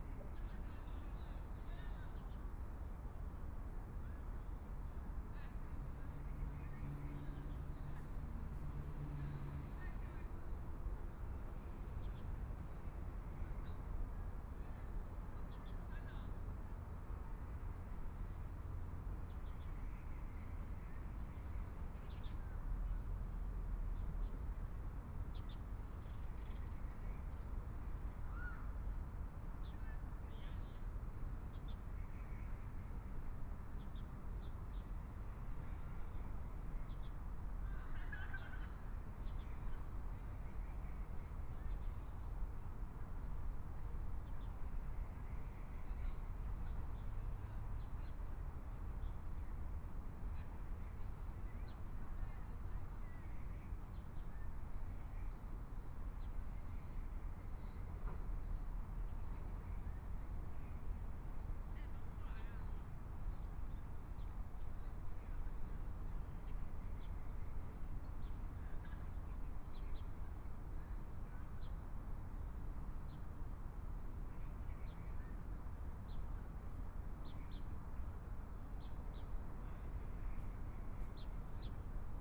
Xinsheng Park - Taipei EXPO Park - in the Park
in the Park, Environmental sounds, Traffic Sound, Tourist, Clammy cloudy, Binaural recordings, Zoom H4n+ Soundman OKM II